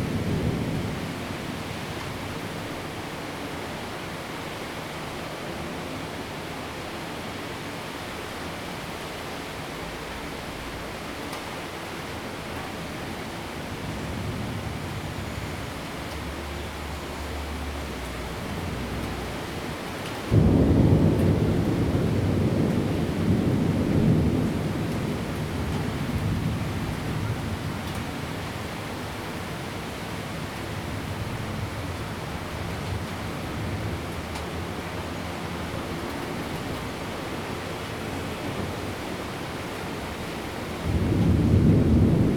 Rende 2nd Rd., Bade Dist. - Thunder
Thunderstorms, Housing construction, traffic sound
Zoom H2n MS+XY+ Spatial audio